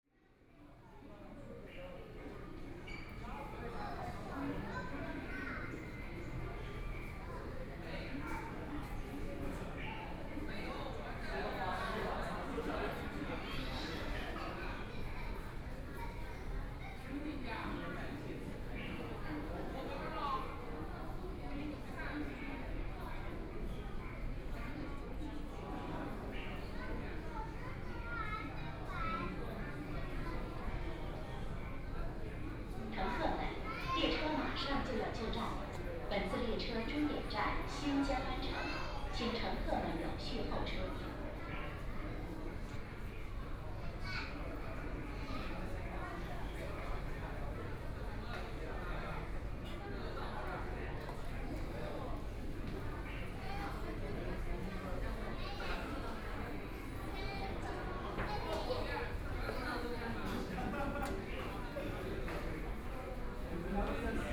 East Nanjing Road Station, Shanghai - walking in the station
walking in the station, Binaural recording, Zoom H6+ Soundman OKM II
Shanghai, China, 23 November 2013